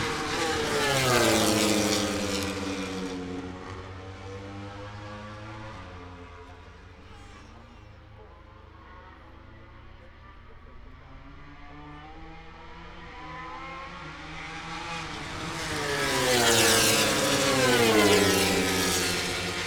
Moto grand Prix ... Free practice one ... International Pit Straight ... Silverstone ... open lavaliers on T bar ...